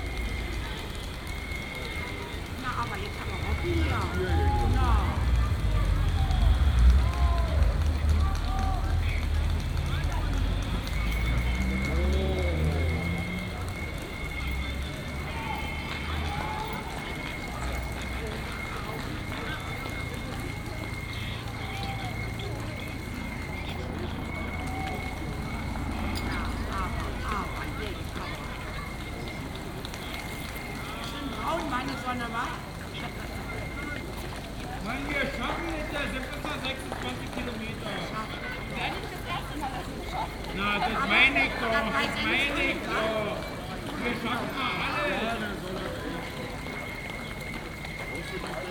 kottbusser damm, schönleinstr. - berlin marathon, nachhut
28.09.2008 11:00
berlin marathon, die letzten läufer, strasse gesperrt, ungewöhnliche abwesenheit von autogeräuschen
berlin marathon, rearguard, almost no car sounds, very unusual for this place